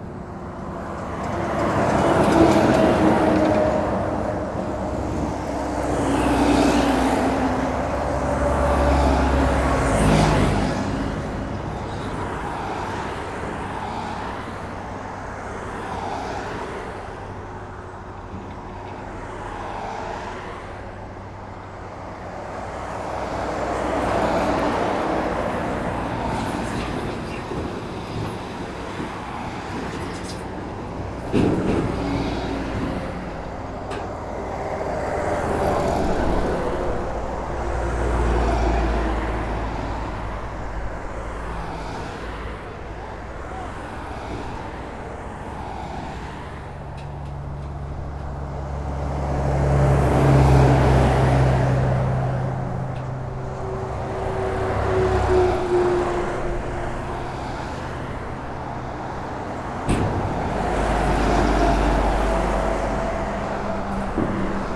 klaxon 00:01:48,00000000
grincements remorques travaux ? 00:01:56,00000000
instant calme 00:04:38,44379167
passage engin de chantier 00:06:20,00000000